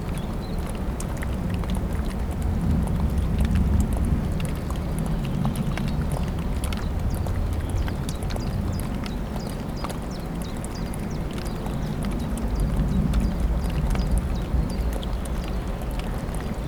burg/wupper: waldweg - the city, the country & me: forest track
water dripping from a rock, gushing of the wupper river
the city, the country & me: april 26, 2013